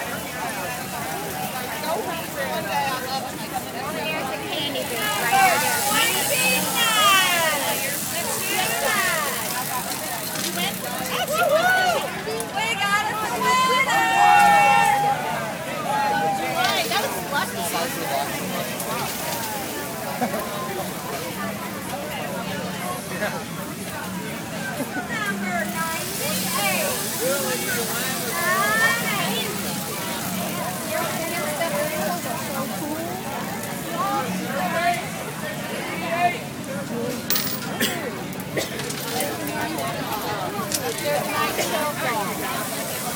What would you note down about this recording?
Games at a church picnic. Recorded on a Zoom H4n.